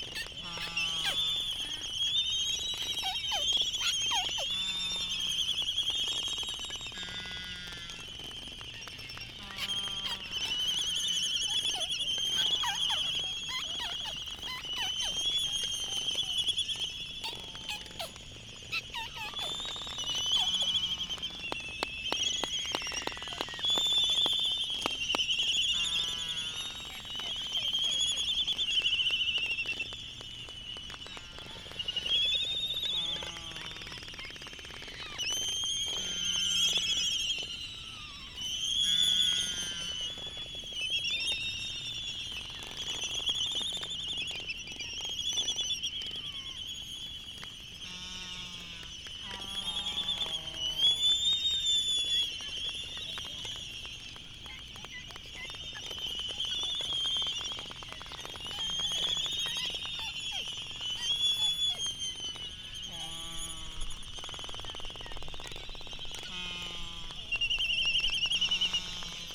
United States Minor Outlying Islands - Laysan albatross dancing ...
Sand Island ... Midway Atoll ... Laysan albatross dancing ... upwards of eight birds involved ... birds leaving and joining ... lavalier mics either side of a fur covered table tennis bat ... think Jecklin disc ... though much smaller ... background noise ... they were really rocking ...
12 March 2012, 19:01